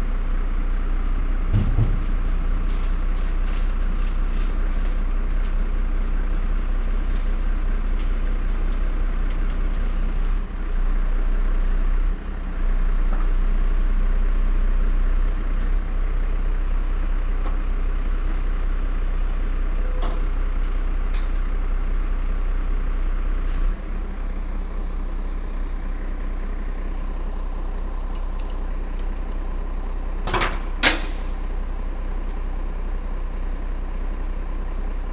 cologne, mainzerstr, containerabholung
soundmap: köln/ nrw
einhängen und aufladen eines schuttcontainers, abfahrt des fahrzeuges nachmittags
project: social ambiences/ listen to the people - in & outdoor nearfield recordings